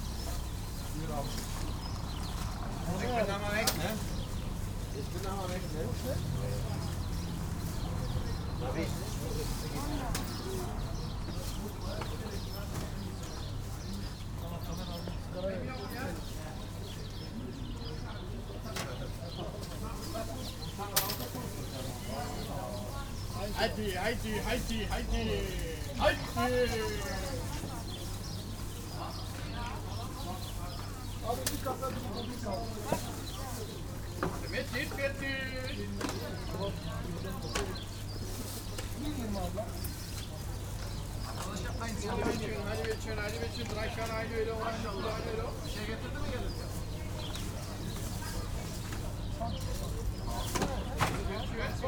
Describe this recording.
Maybachufer market ambience in corona / covid-19 times. compare to earlier recordings, the difference is remarkable, which of course is no surprise. (Sony PCM D50, Primo EM172)